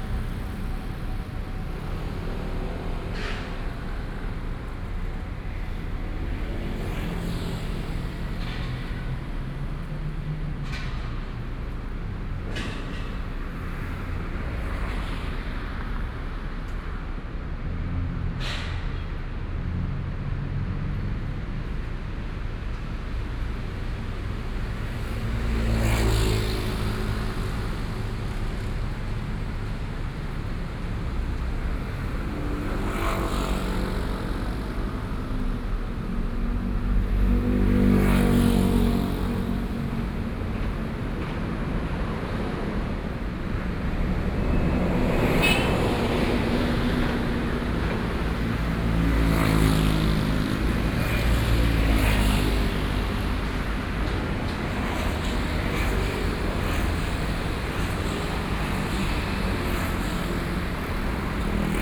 Zhongshan N. Rd., Taipei City - Standing on the roadside
Standing on the roadside, Traffic Sound, Opposite the building under construction
Sony PCM D50+ Soundman OKM II
Taipei City, Taiwan